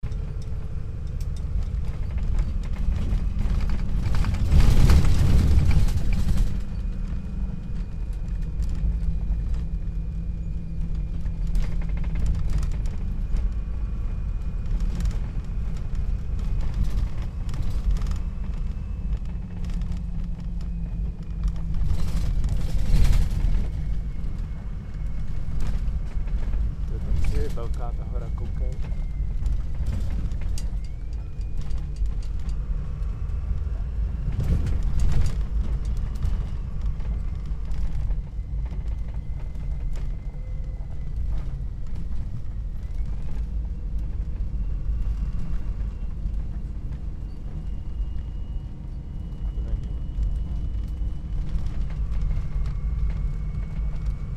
Denali bus, Alaska
ride on the last bus in Denali national park for 2008 season